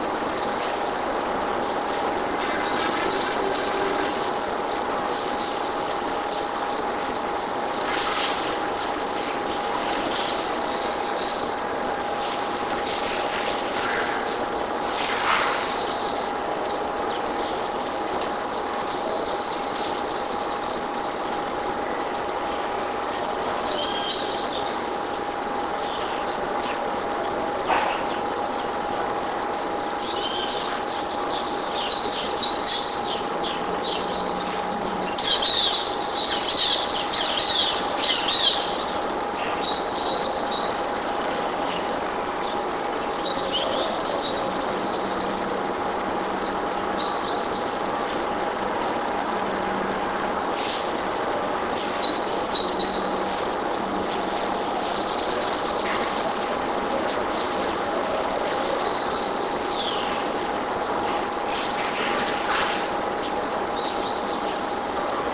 Girardot, Cundinamarca, Colombia - Amanece Girargot 5:45 am
Recorder placed in front of my window.